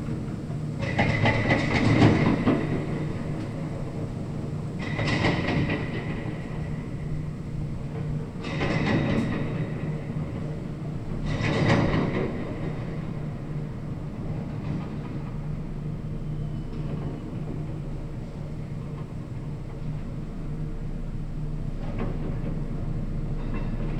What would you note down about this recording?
ein bagger beim planieren auf einer baustelle, an excavator levelling ground on a construction site